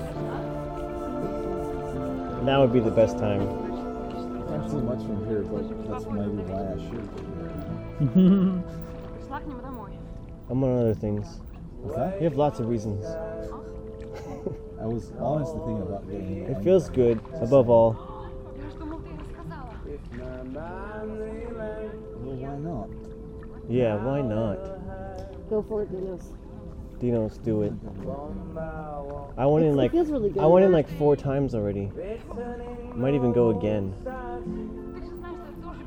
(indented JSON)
{
  "title": "Schlachtensee Berlin, Germany - lots of reasons",
  "date": "2013-07-24 22:05:00",
  "latitude": "52.44",
  "longitude": "13.22",
  "altitude": "37",
  "timezone": "Europe/Berlin"
}